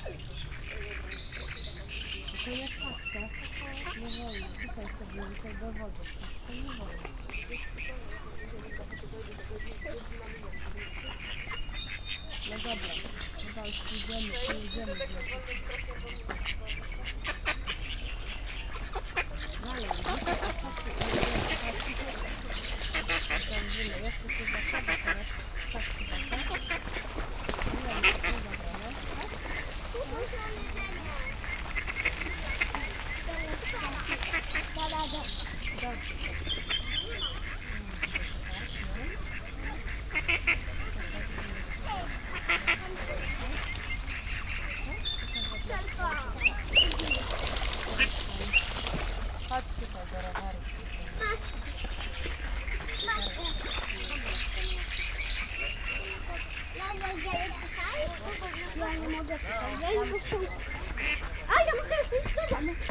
Morasko, close to Campus UAM, Moraskie ponds - teenager ducks
(binaural) many different birds sharing space around the pond. a group of young ducks running right by my feet. as usual plenty of people resting at the pond, walking around, feeding the birds.
Poznań, Poland, 24 May 2015, ~14:00